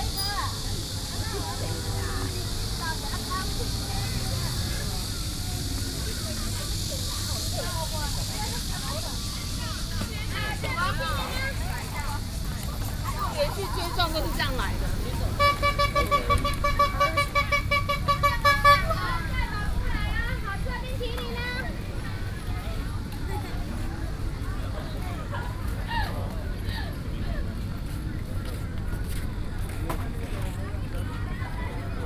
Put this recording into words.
Cicadas cry, Marina Park holiday, hot weather, Vendors selling ice cream, Sony PCM D50+ Soundman OKM II